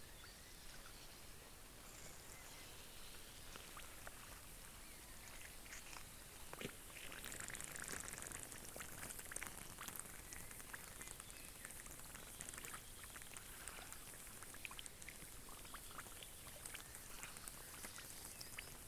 {"title": "underwater insects in lake Peipsi", "date": "2008-06-27 00:35:00", "latitude": "58.34", "longitude": "27.42", "altitude": "31", "timezone": "Europe/Berlin"}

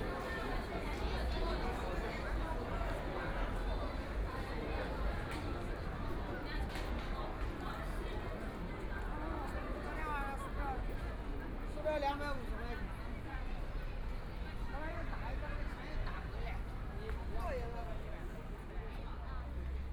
{"title": "Shanghai Railway Station - At the exit of the train station", "date": "2013-11-23 13:37:00", "description": "At the exit of the train station, Many people waiting to greet friends and family arrive at the station at the exit, the sound of message broadcasting station, Zoom H6+ Soundman OKM II", "latitude": "31.25", "longitude": "121.45", "altitude": "11", "timezone": "Asia/Shanghai"}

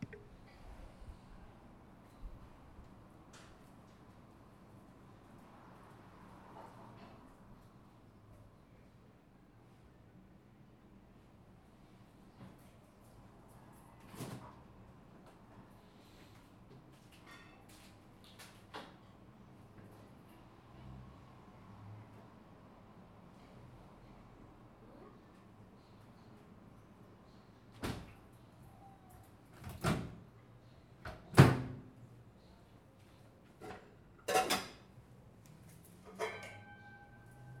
{"title": "Edifício Rio Chui - R. Chuí, 71 - Paraíso, São Paulo - SP, 04104-050, Brasil - cozinha/Kitchen", "date": "2018-09-21 15:14:00", "description": "Paisagem Sonora de cozinha, gravado com TASCAM DR-40. Field Recording of kitchen.", "latitude": "-23.58", "longitude": "-46.64", "altitude": "796", "timezone": "GMT+1"}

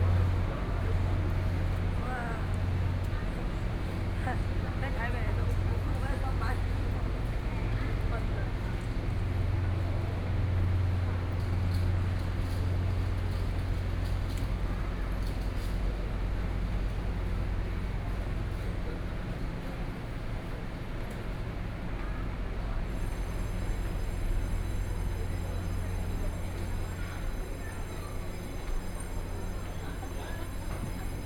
{"title": "Hualien Station, Hualien City - Walking in the underpass", "date": "2014-02-24 09:42:00", "description": "From the beginning of the platform, Then through the underground passage, Out of the station\nBinaural recordings\nZoom H4n+ Soundman OKM II + Rode NT4", "latitude": "23.99", "longitude": "121.60", "timezone": "Asia/Taipei"}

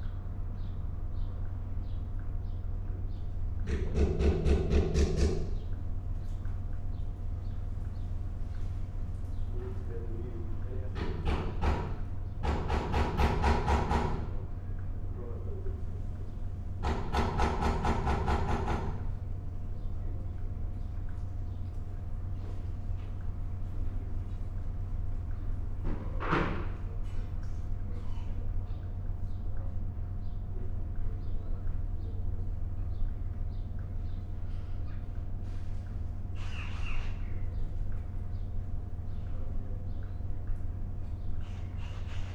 {"title": "Long ambient in a quiet station.", "date": "2022-06-08 14:00:00", "description": "Recorded as I slowly wander around the station on a quiet day. Workmen are refurbishing the old victorian canopy over the platform. A few people talk. 2 trains arrive and leave.\nMixPre 6 II with 2 Sennheiser MKH 8020s", "latitude": "52.11", "longitude": "-2.32", "altitude": "90", "timezone": "Europe/London"}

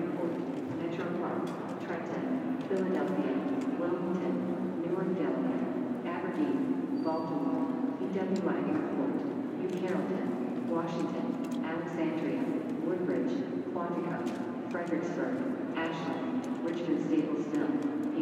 {"title": "W 33rd St, New York, NY, USA - Moynihan Train Hall at New York Penn Station", "date": "2022-02-26 15:05:00", "description": "Sounds from the Moynihan Train Hall at New York Penn Station.", "latitude": "40.75", "longitude": "-74.00", "altitude": "23", "timezone": "America/New_York"}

